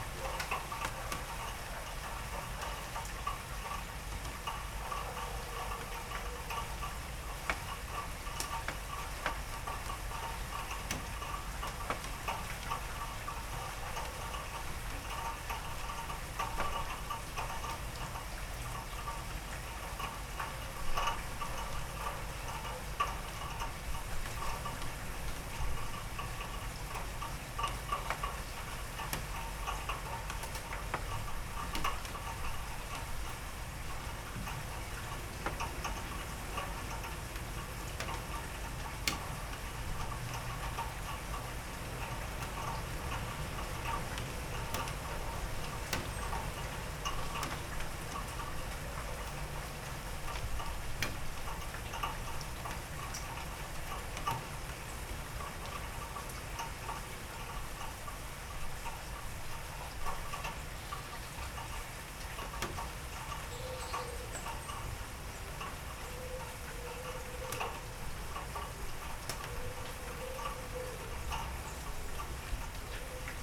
Berlin Bürknerstr., backyard window - spring rain
spring rain, drops in drain and on garbage cans, light wind, 13°C
sony pcm d50, audio technica at8022
Berlin, Germany, 21 March